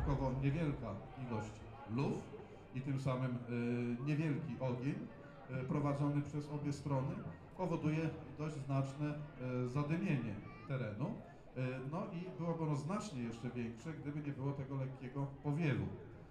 Lidzbark Warmiński, Bishops Castle, Battle - Napoleon's battle (part 1)
The biggest battle of Napoleon's east campaigne which took place in Warmia region (former East Preussia).
Lidzbark Warmiński, Poland, 7 June 2014